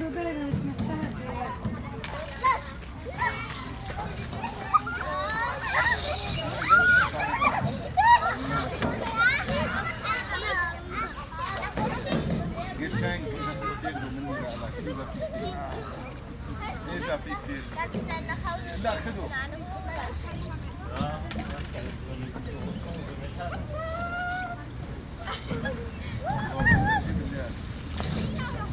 Lohmühle, playground
Sat., 30.08.2008, 17:00
children, playground slide, arab men gaming
Berlin, 30 August, 17:00